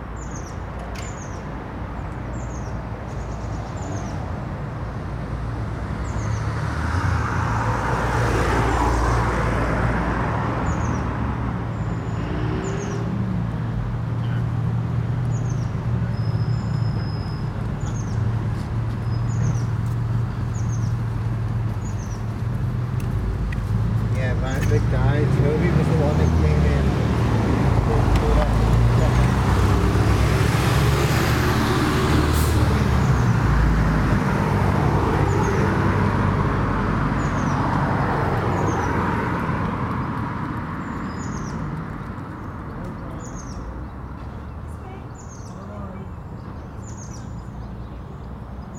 The Drive West Avenue Moorfield Moor Road South
Roadworks stop/start the traffic
A steady drift of people
from the coffee van
Rooftops of moss-grown tiles
A cupola
pagoda style
with a weathervane
England, United Kingdom, 19 February, 10:30